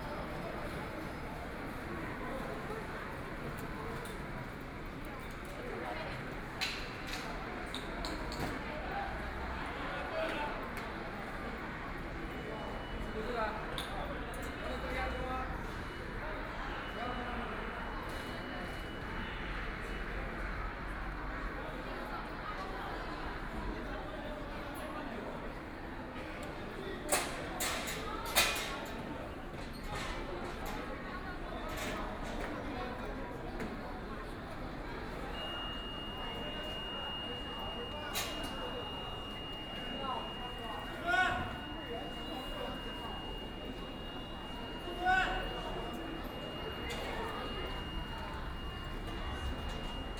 Shanghai Railway Station - At the exit of the train station
At the exit of the train station, Many people waiting to greet friends and family arrive at the station at the exit, the sound of message broadcasting station, Zoom H6+ Soundman OKM II